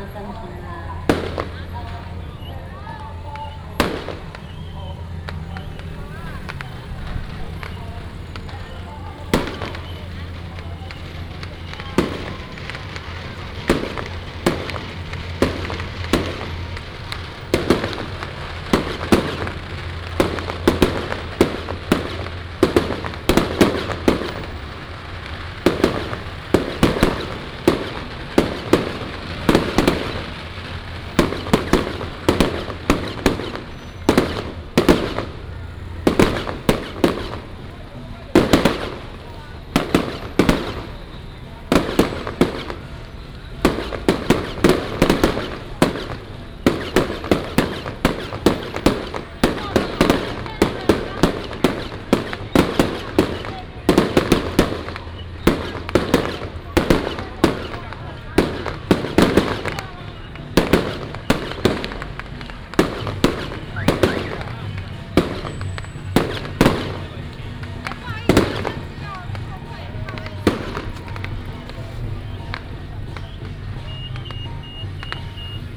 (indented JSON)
{
  "title": "Sanmin Rd., Baozhong Township - Matsu Pilgrimage Procession",
  "date": "2017-03-01 15:47:00",
  "description": "Firecrackers and fireworks, Many people gathered at the intersection, Matsu Pilgrimage Procession",
  "latitude": "23.70",
  "longitude": "120.31",
  "altitude": "12",
  "timezone": "Asia/Taipei"
}